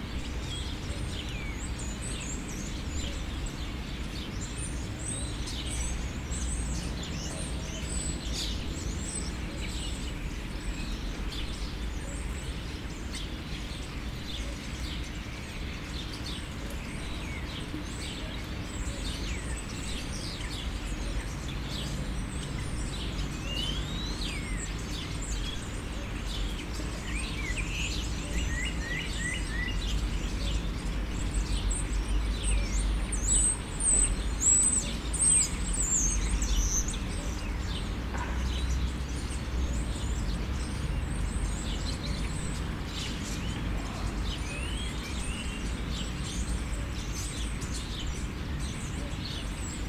Borov gozdicek, Nova Gorica, Slovenia - The sounds of nature
Stopping in the little woods in the city, birds mixed with the sounds of cars.
12 June, 20:30